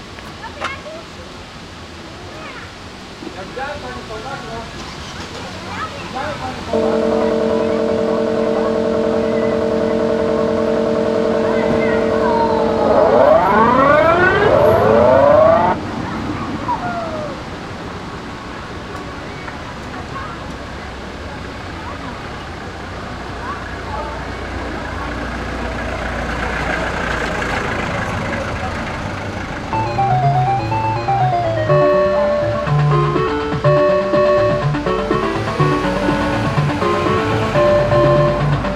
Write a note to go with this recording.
Grajace automaty rec. Rafał Kołacki